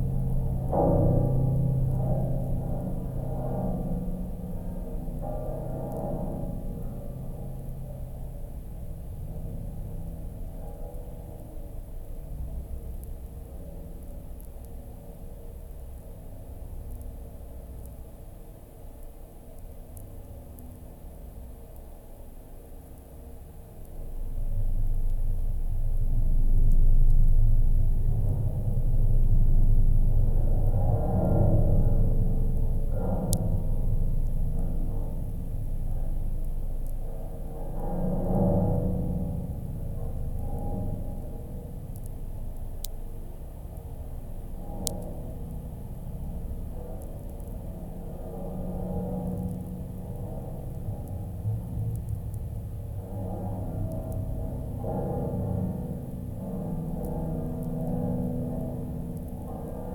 Voverynė, Lithuania, metallic bridge
strong wind. metallic bridge on a little lake. geophone on the bridge and electromagnetic antenna Priezor catching distant lightnings...